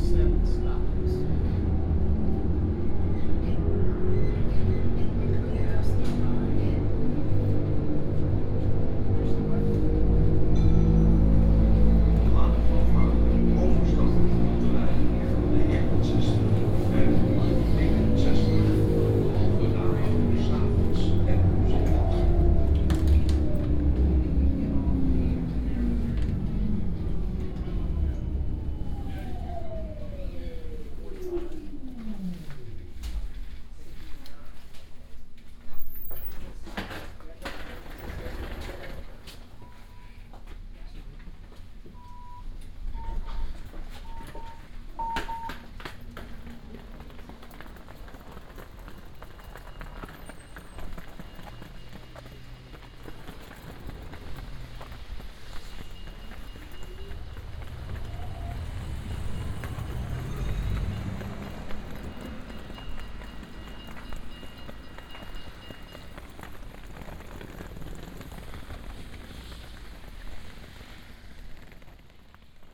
Binaural recording of a tram ride towards Nieuw Sloten.
Recorded with Soundman OKM on Sony PCM D100
Noord-Holland, Nederland, 14 September 2017, ~18:00